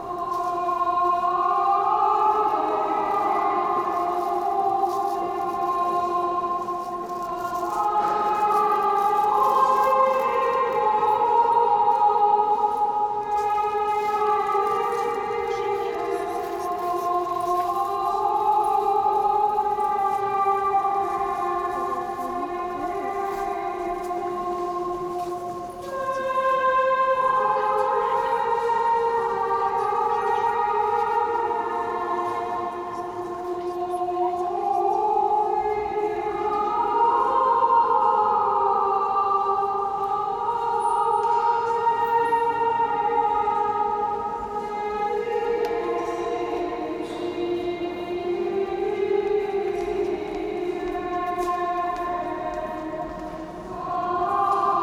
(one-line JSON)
{"date": "2011-05-27 18:45:00", "description": "Moscow Immaculate Conception Catholic Cathedral Novus Ordo part2", "latitude": "55.77", "longitude": "37.57", "altitude": "152", "timezone": "Europe/Moscow"}